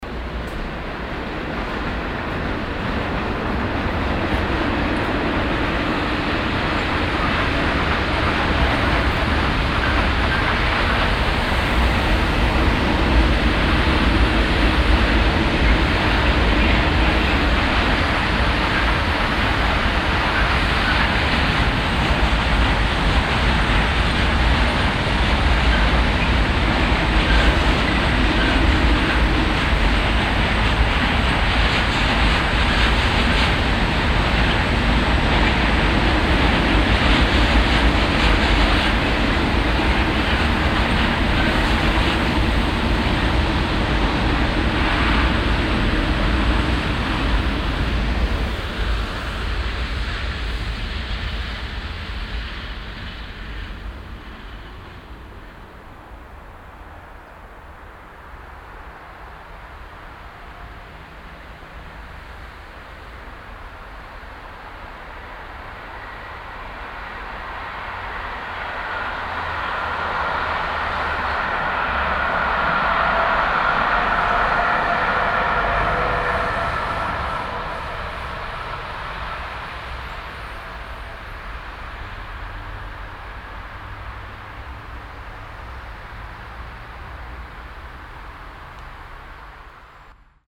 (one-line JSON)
{"title": "cologne, gladbacherstrasse, an bahnstrecke", "date": "2008-09-18 12:52:00", "description": "vorbeifahrt von Güterzug und S-Bahn nachmittags\nsoundmap nrw - social ambiences - sound in public spaces - in & outdoor nearfield recordings", "latitude": "50.95", "longitude": "6.94", "altitude": "48", "timezone": "Europe/Berlin"}